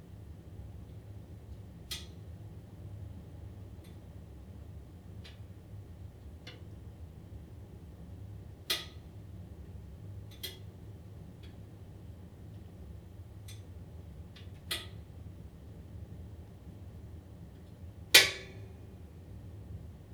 cast iron stove cooling down - cooling down
The sound of the fantastic Morso Stove cooling down